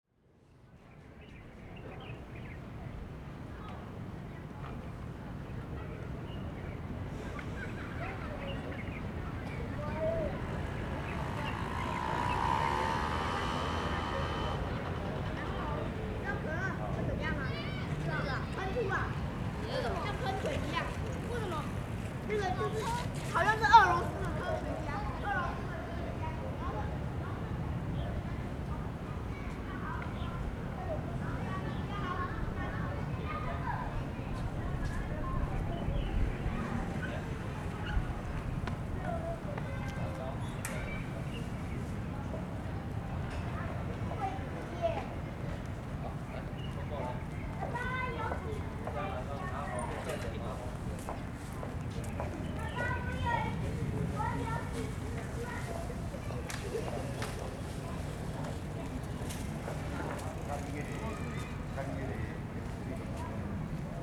Zuoying District, Kaohsiung - Evening in the park
Square in front of the temple, Sony ECM-MS907, Sony Hi-MD MZ-RH1